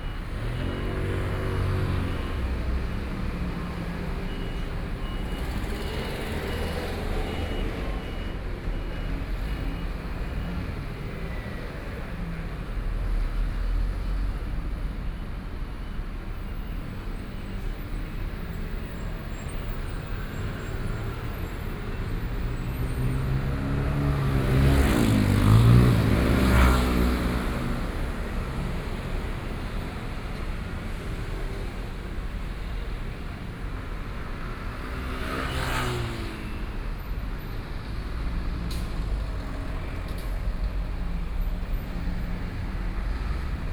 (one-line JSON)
{"title": "Zhongzheng Rd., Shilin - Walking in the street", "date": "2013-11-11 20:39:00", "description": "Walking in the street, Direction to the MRT station, Binaural recordings, Zoom H6+ Soundman OKM II", "latitude": "25.09", "longitude": "121.53", "altitude": "10", "timezone": "Asia/Taipei"}